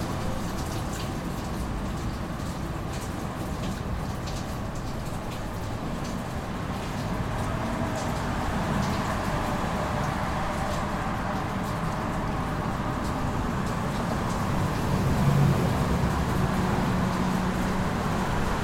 Sounds of water from the rain and traffic.
New York, USA, August 2019